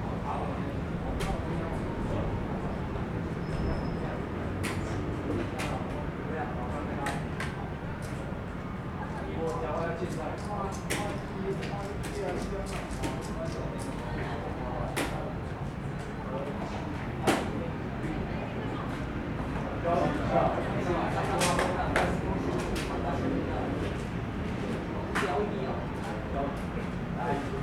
A group of middle-aged people playing cards and chess, In Park
Sony Hi-MD MZ-RH1 +Sony ECM-MS907